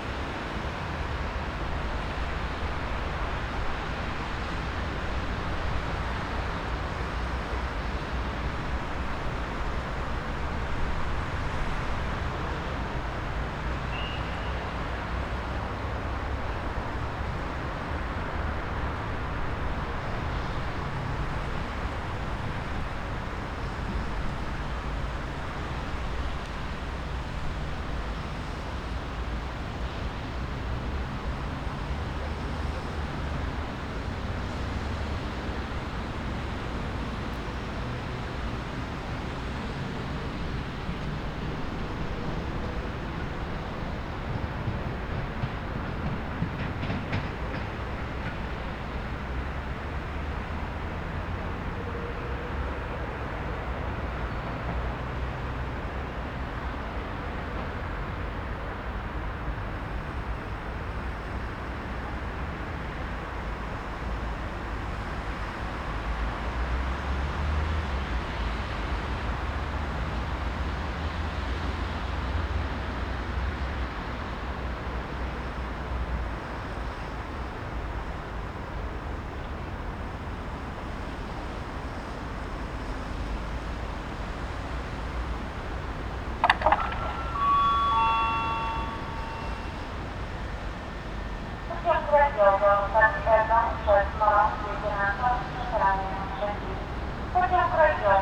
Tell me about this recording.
City rush. Two train announcements. Train arrival and departure. Snow is melting.